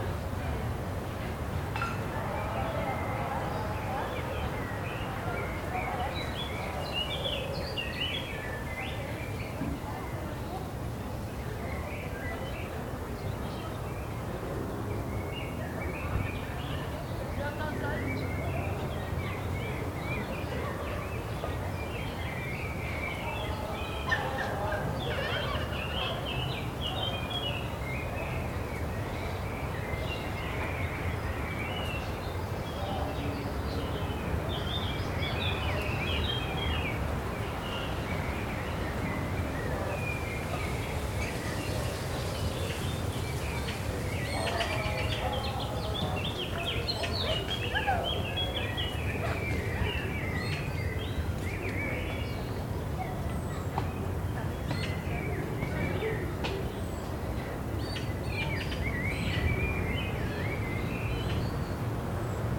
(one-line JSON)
{"title": "Rue du Dix Avril, Toulouse, France - Jolimont 03", "date": "2022-04-10 10:25:00", "description": "ambience Parc\nCaptation : ZOOMH4n", "latitude": "43.61", "longitude": "1.46", "altitude": "194", "timezone": "Europe/Paris"}